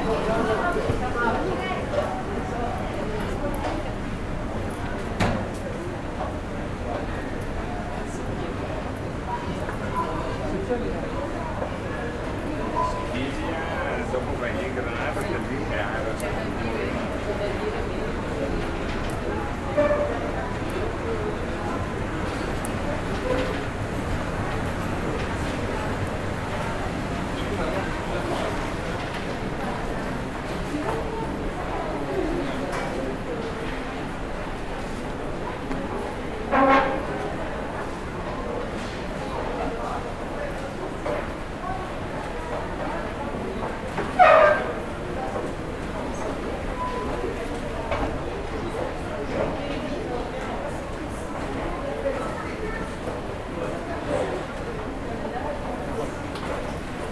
Taking the 'outside' escalator which ascends the 6 floors of the Centre Pompidou, Paris.
Paris, France, 24 July